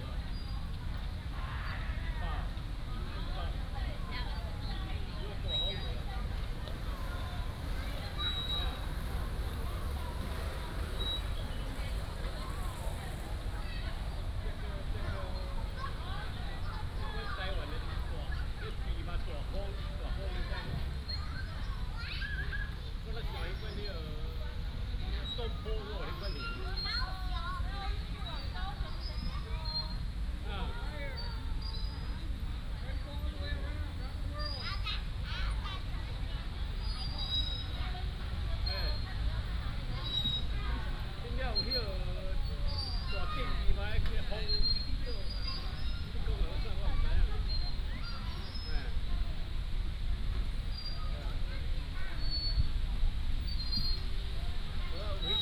臺南公園, Tainan City - Children's play area
Children's play area, Traffic sound, in the park